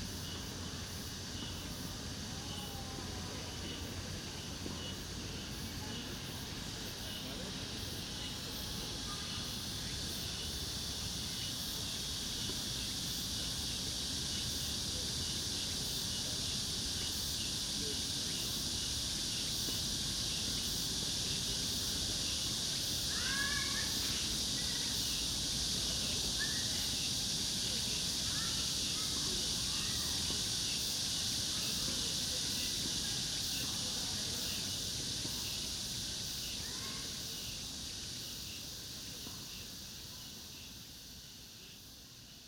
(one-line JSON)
{"title": "대한민국 서울특별시 서초구 양재2동 235-1 - Yangjae Citizens Forest, Summer, Cicada", "date": "2019-08-07 14:10:00", "description": "Yangjae Citizens Forest, Summer, Cicada\n양재 시민의 숲, 매미", "latitude": "37.47", "longitude": "127.04", "altitude": "25", "timezone": "Asia/Seoul"}